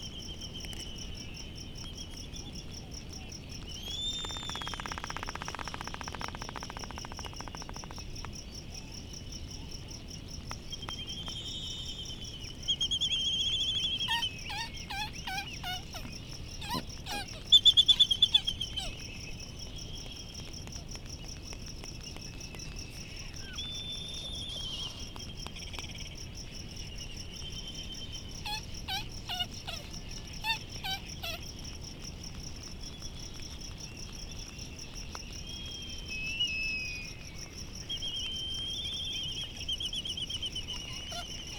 United States Minor Outlying Islands - Midway Atoll soundscape ...
Midway Atoll soundscape ... Sand Island ... bird calls ... laysan albatross ... bonin petrels ... white terns ... distant black-footed albatross ... black noddy ... and a cricket ... open lavaliers on mini tripod ... background noise and some windblast ... not quite light as petrels still leaving ...